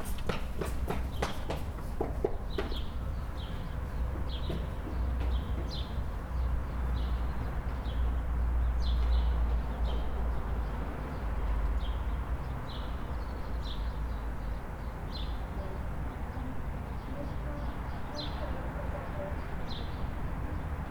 Bratislava-Old Town, Slowakei - prazska 02